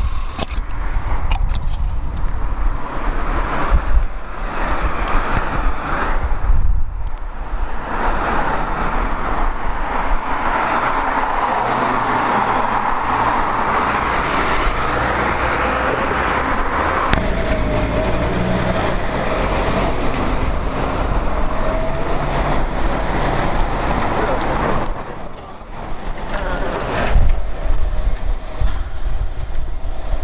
Staré Mesto, Slovenská republika - public transportation
Bratislava, Slovakia